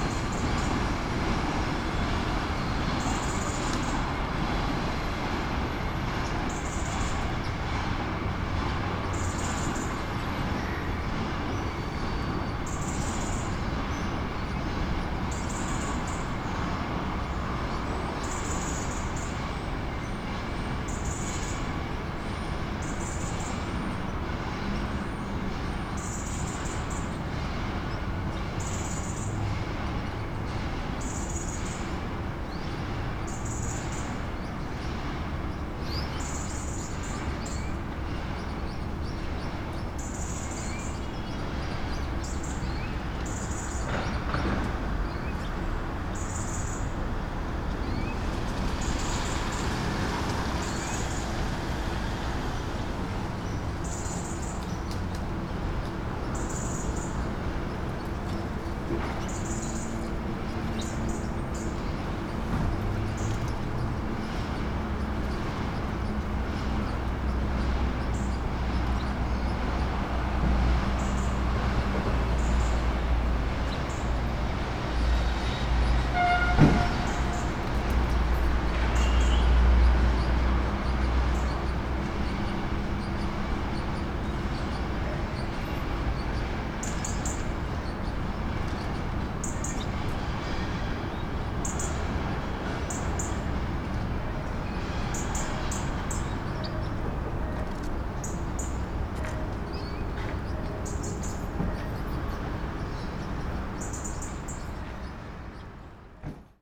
Punto Franco Nuovo, molo VII, Trieste - back side of Trieste Marine Terminal
caged birds, train passing ... heavy traffic soundscape
Trieste, Italy, 9 September 2013, ~15:00